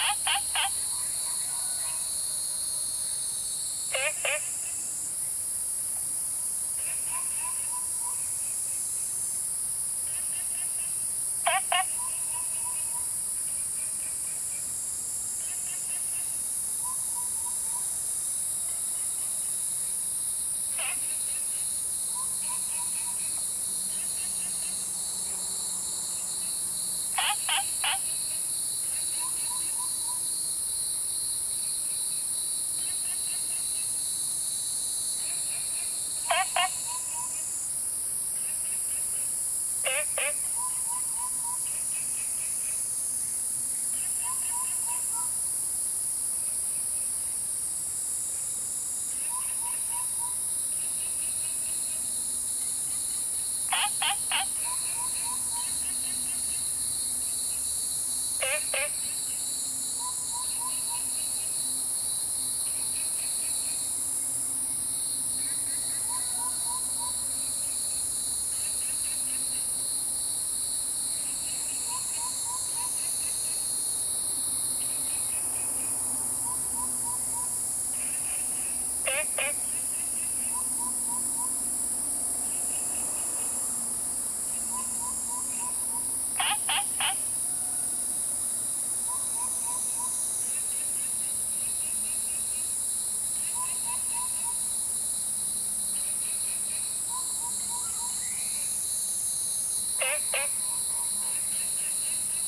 青蛙ㄚ 婆的家, Taomi Ln., Puli Township - In the morning
Insects sounds, Frogs chirping, Bird calls, Chicken sounds, Cicadas called
Zoom H2n MS + XY
Puli Township, 桃米巷11-3號